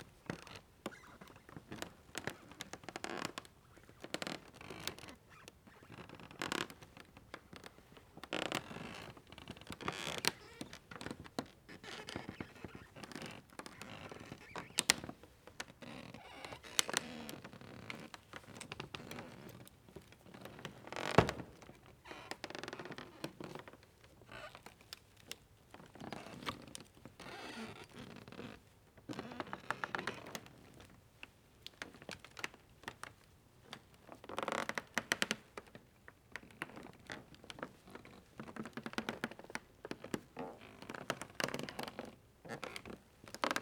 As Peter mentions below: Creaky floor of the disused library/sports hall in the building of the University of Applied Sciences (also hosting a gallery, and the ZeM for a while), formerly the "Institut für Lehrerbildung" (GDR). I was walking over the wooden floor without shoes. Today, in April 2019, the whole building is already demolished in order to redesign Potsdam's new 'historical' inner city.
[Beyerdynamic MCE 82, Sony PCM-D100]